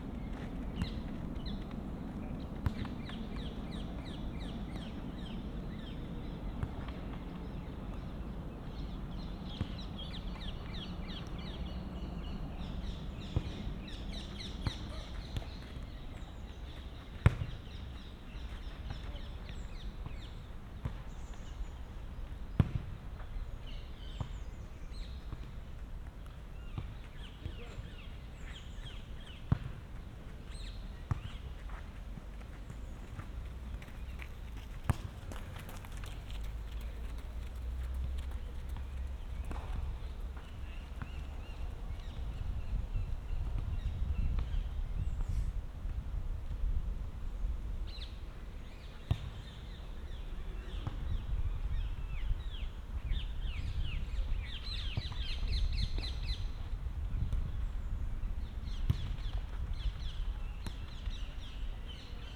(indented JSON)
{
  "title": "Rheinpromenade, Mannheim, Deutschland - Sportplatz Schnickenloch",
  "date": "2022-05-30 19:07:00",
  "description": "Sportplatz, Aufwärmen beim Fußball, Jogger dreht Runden, zwei junge Menschen üben Cricket, Vögel, Abendsonne, junge Familie läuft hinter mir vorbei, Wind, Urban",
  "latitude": "49.48",
  "longitude": "8.46",
  "altitude": "97",
  "timezone": "Europe/Berlin"
}